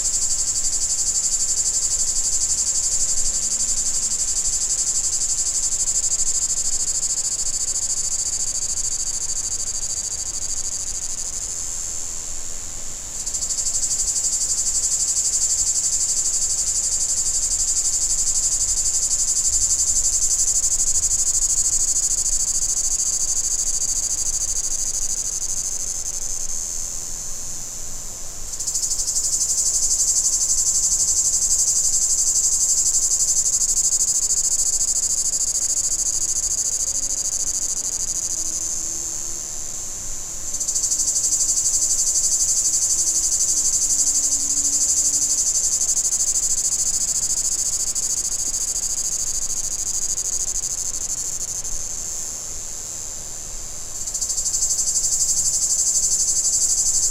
Le Parc du Confluent, Rte de Lacroix - Falgarde, 31120 Portet-sur-Garonne, France - Le Parc du Confluen
cicada, walker, wind in the trees
Captation : ZOOM H6
France métropolitaine, France, August 2022